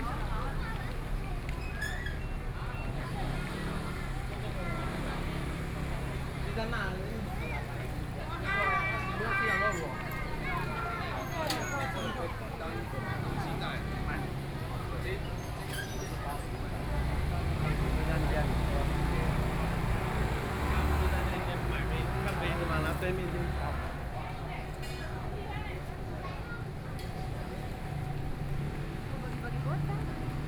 walking in the Night Market, Traffic Sound